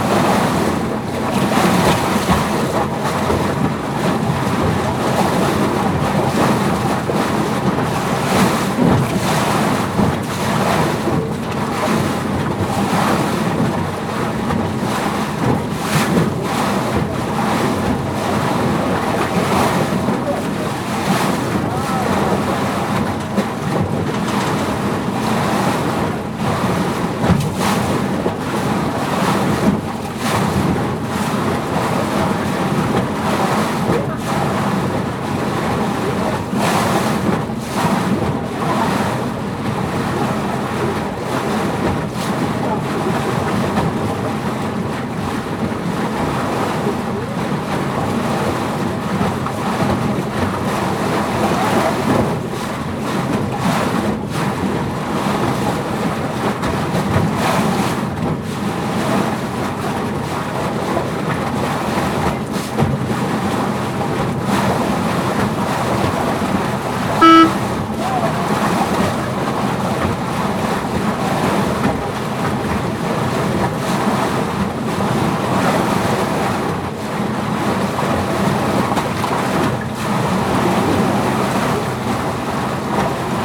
Monheim (Rhein), Deusser Haus / Marienkapelle, Monheim am Rhein, Deutschland - Monheim am Rhein - Piwipper Fähre
Crossing the Rhine with the ferry boat "Piwipper Fähre"
soundmap NRW
topographic field recordings and soundscapes